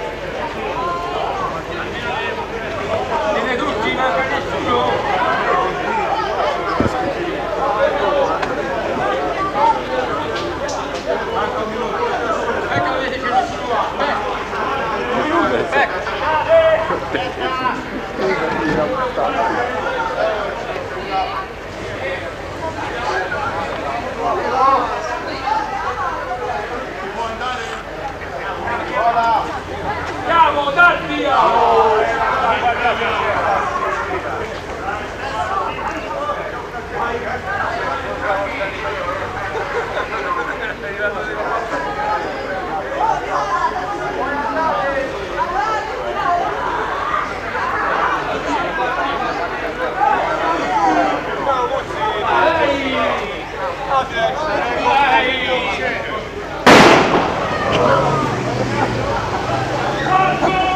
Siena SI, Italien - Anniversario della Liberazione - Assembly and start of a run
Menschen sind versammelt, es ist Feiertag „Tag der Befreiung Italiens vom Faschismus“ - es ist der Beginn eines Laufes: der Pistolenschuss. / people are gathered, it is a holiday - it is the beginning of a run: the gun shot. Sony Walkman cassette recording, digitalized.
Siena SI, Italy